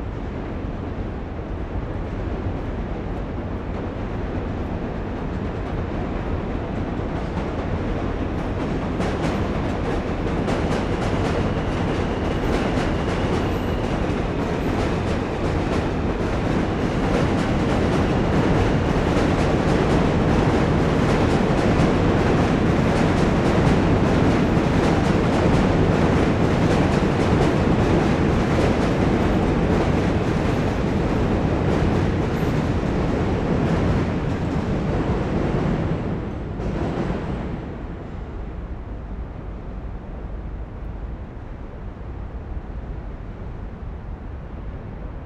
New York Manhattan Bridge
Metro sound under the Manhattan bridge in NYC